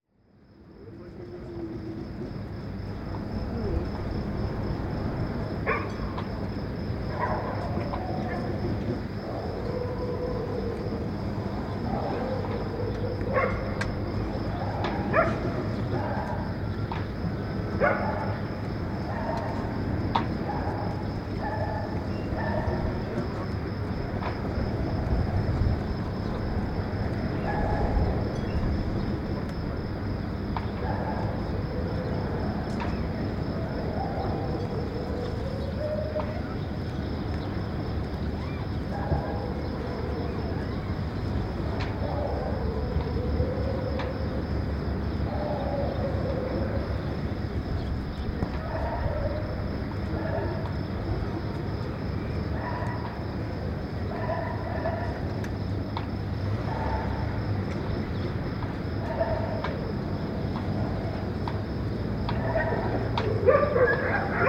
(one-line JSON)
{"title": "Marina Kalkan, Turkey - 915d distant dog fight", "date": "2022-09-22 06:20:00", "description": "Recording of a distant dog fight in the early morning\nAB stereo recording (17cm) made with Sennheiser MKH 8020 on Sound Devices MixPre-6 II.", "latitude": "36.26", "longitude": "29.41", "altitude": "6", "timezone": "Europe/Istanbul"}